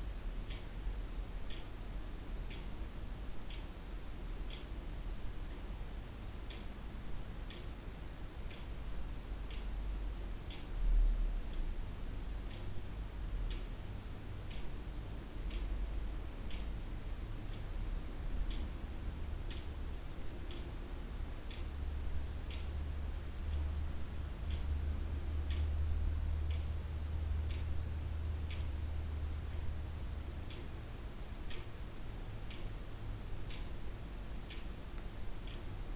"You are Gone", Atmosphere in a kitchen, Saint-Girons, France - "You are Gone ", atmosphere in a kitchen of an old house.
You are gone, and I am here to listen your absence.
Atmosphere in a kitchen of an old house, in 6 avenue Galliéni, St Girons, France. Clocks and nothing else...